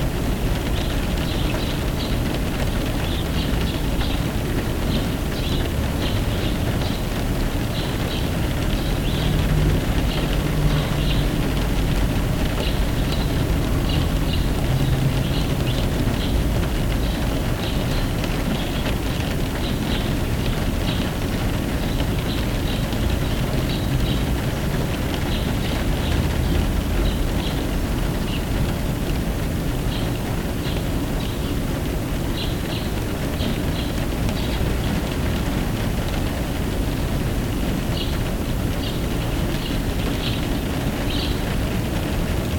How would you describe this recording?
It's a kind of wet snow recording from inside a car. A mix of rain and snow, what is also well heard in the recording. While I was recording the snow also bird were pretty loud, which are well heard in the mix. TASCAM DR100-MKIII, MikroUSI Omni Directional Microphones